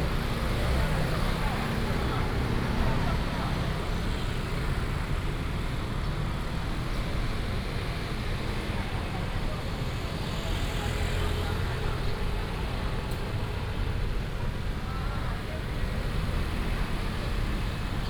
Ln., Zhongzheng Rd., Xinwu Dist. - Walking in the alley
Walking in the alley, Traditional market area, traffic sound